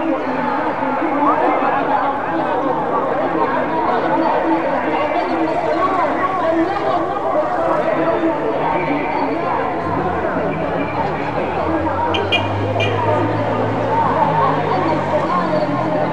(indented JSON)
{
  "title": "shali, siwa, friday sermon",
  "date": "2010-11-19 12:15:00",
  "description": "about 6 mosque loud speaker recorded from the top of the old town called Shali",
  "latitude": "29.21",
  "longitude": "25.52",
  "timezone": "Africa/Cairo"
}